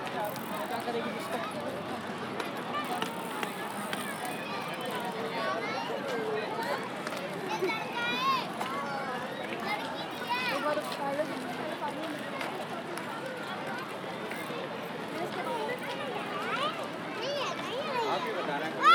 Rajpath, Rajpath Area, Central Secretariat, New Delhi, Delhi, India - 15 India Gate fields
Sunday walk at India Gate fields
2 March 2016, 15:11